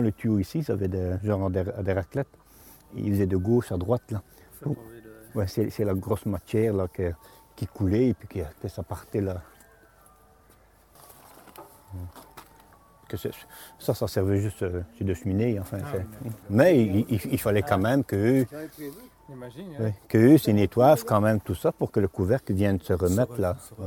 Franz Butryn
An old worker testimony on the old furnaces of the Anderlues coke plant. We asked the workers to come back to this devastated factory, and they gave us their remembrances about the hard work in this place.
Recorded with Patrice Nizet, Geoffrey Ferroni, Nicau Elias, Carlo Di Calogero, Gilles Durvaux, Cedric De Keyser.